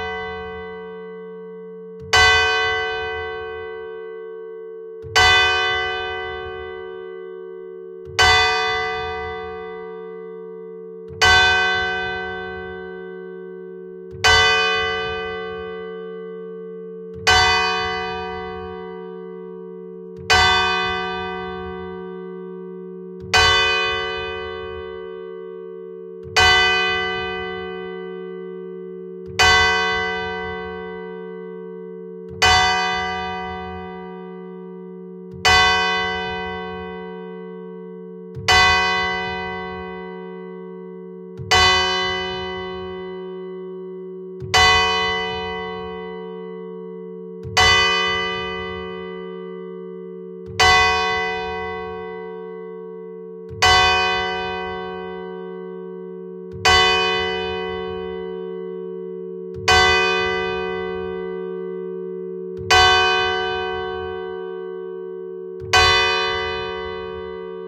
Le Touquet - Paris Plage
église Ste Jeanne d'Arc
Tintement.